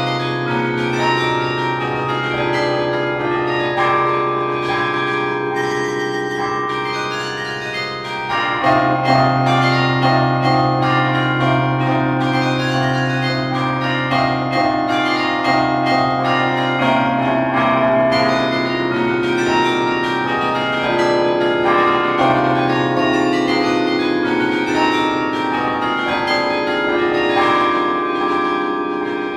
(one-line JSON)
{"title": "Bruxelles, Belgique - Brussels carillon", "date": "2011-12-24 15:10:00", "description": "Gilles Lerouge, playing at the Brussels carillon on the Christmas Day. He's a player coming from Saint-Amand les Eaux in France.\nBig thanks to Pierre Capelle and Thibaut Boudart welcoming me in the tower.", "latitude": "50.85", "longitude": "4.36", "altitude": "50", "timezone": "Europe/Brussels"}